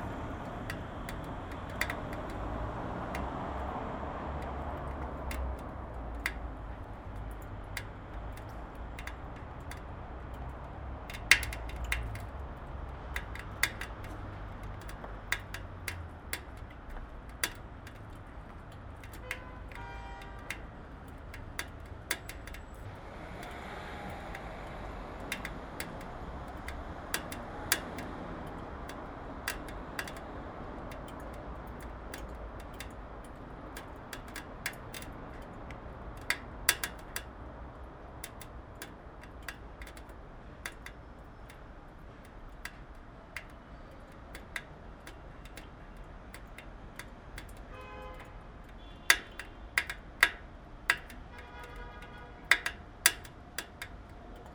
Brussel, Belgium - Brussels street
Water falling into a gutter, and cars driving on the cobblestones.
25 August 2018